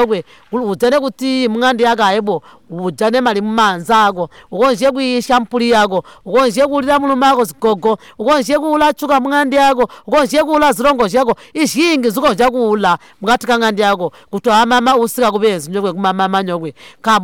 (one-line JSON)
{"title": "Chibondo Primary, Binga, Zimbabwe - I'm Maria Munkuli, chair lady of Manjolo Women's Forum...", "date": "2016-07-08 08:15:00", "description": "Margaret Munkuli interviews Maria Munkuli, the Chair Lady of Zubo’s Manjolo Women’s Forum. Maria tells the story and history of the Forum since its inception. She emphasizes the collective project of Manjolo Women’s Forum which is to collect Baobab fruits (Mabuyo) and produce Baobab Maheo (Muyaya). Maria describes how the local community benefitted from the success of the project. The women used to share and distribute Maheo to vulnerable members of the community, to old people and school children. With the profits of selling Maheo through local shops in Binga and Manjolo, they supported three orphans in the community, enabling them to go to school. The project is currently on hold due to requirements of the Ministry of Health that the project ought to have its own production shed.\na recording from the radio project \"Women documenting women stories\" with Zubo Trust, a women’s organization in Binga Zimbabwe bringing women together for self-empowerment.", "latitude": "-17.76", "longitude": "27.41", "altitude": "628", "timezone": "Africa/Harare"}